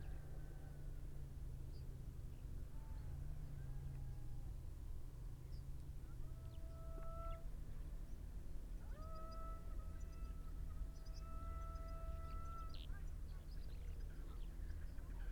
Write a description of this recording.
horses and hounds ... opportunistic recording with parabolic ...